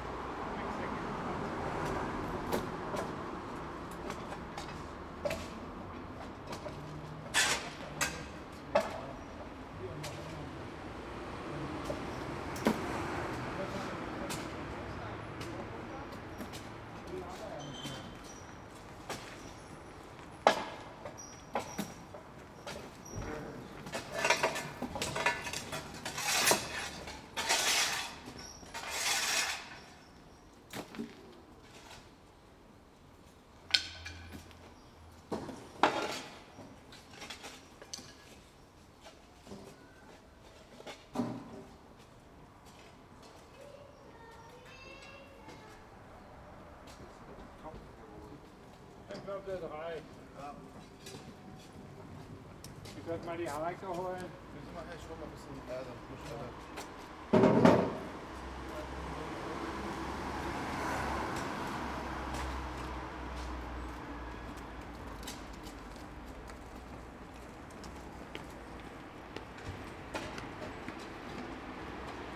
berlin, bürknerstraße: in front of radio aporee - begrünungsaktion / planting action
19.04.2009 13:00 sonntag mittag, baumscheibenbepflanzung / suday noon, planting action around tree